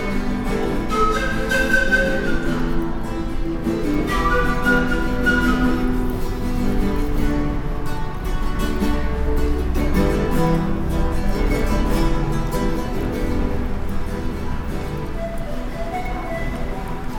vancouver, harbour site, walk way to sea bus
walking in the gang way to the sea bus station - footsteps, talks and a street musician playing the obligatory pan pipe
soundmap international
social ambiences/ listen to the people - in & outdoor nearfield recordings